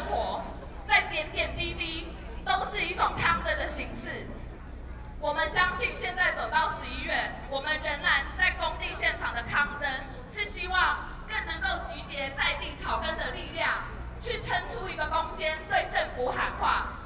Ketagalan Boulevard, Zhongzheng District - Protest
Self-Help Association of speech, Sony PCM D50 + Soundman OKM II